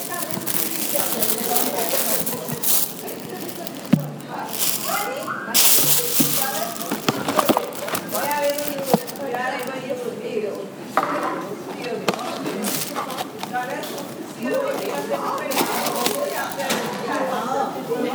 North Ryde NSW, Australia - Lunch Room
Sounds in a work lunchroom. People talking - different accents, sounds of unwrapping biscuits and preparing lunch, one person singing.
New South Wales, Australia, 18 July 2013, ~14:00